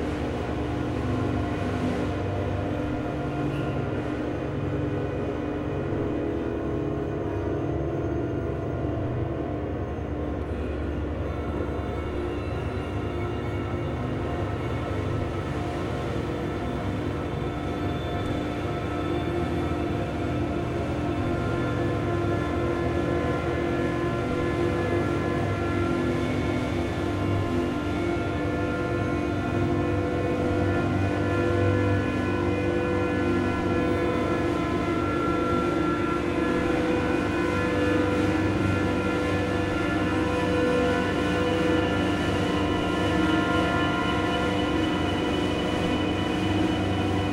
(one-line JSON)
{"title": "neoscenes: Splinter Orchestra at the ABC, live", "latitude": "-33.88", "longitude": "151.20", "altitude": "20", "timezone": "Australia/NSW"}